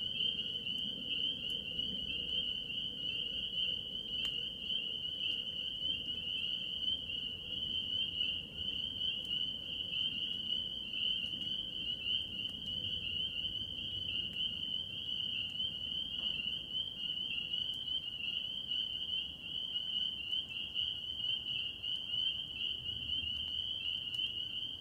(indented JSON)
{
  "title": "Jet Flies Over Kejimkujik National Park Nova Scotia",
  "latitude": "44.41",
  "longitude": "-65.25",
  "altitude": "100",
  "timezone": "Europe/Berlin"
}